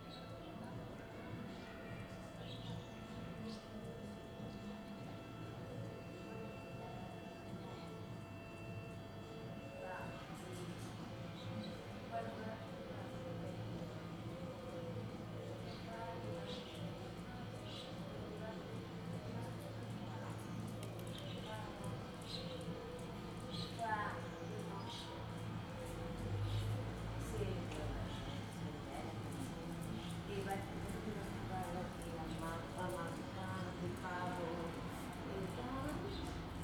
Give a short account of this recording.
Noises from the neighbourhood. People talking, someone playing the piano, music… Recorded from a window using a Zoom H2. No edition.